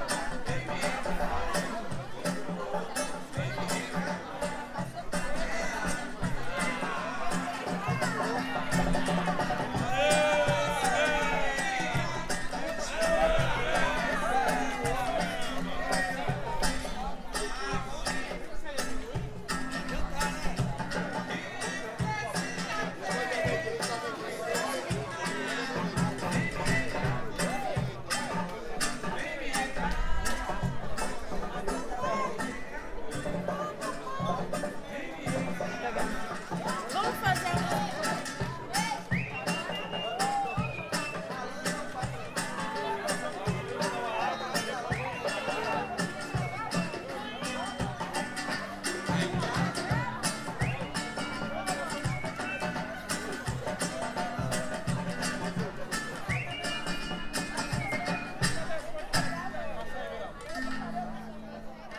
Cachoeira, Bahia, Brazil - Quinta do Preto Velho
Noite em Cachoeira na Rua 25, na quinta do Preto Velho.
Gravado com gravador Tascam DR 100
por Fred Sá
Atividade da disciplina de sonorização ministrado por Marina Mapurunga do curso de Cinema e Audiovisual da UFRB.
27 March 2014, 23:22